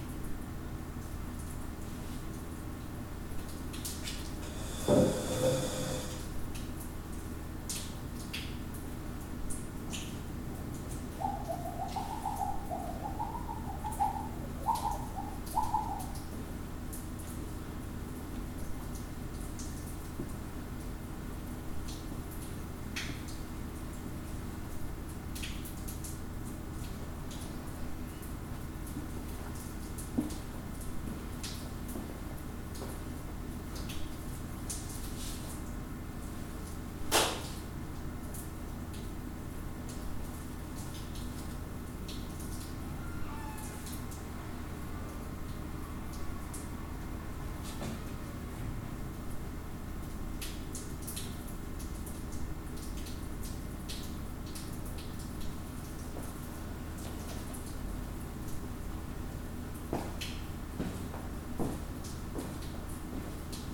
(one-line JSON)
{"title": "Martin Buber St, Jerusalem - A Shelter at Bezalel Academy of Arts and Design", "date": "2019-03-25 14:50:00", "description": "A Shelter at Bezalel Academy of Arts and Design.\nRoom tone, some quiet sounds.", "latitude": "31.79", "longitude": "35.25", "altitude": "805", "timezone": "Asia/Jerusalem"}